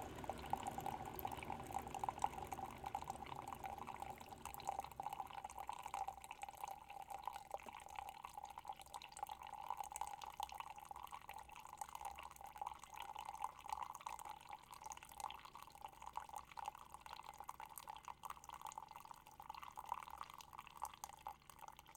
A kitchen counter in Chestnut Mountain, Georgia, USA - Cuppa
Does popping a pod of coffee into a Keurig coffee maker count as "brewing" coffee?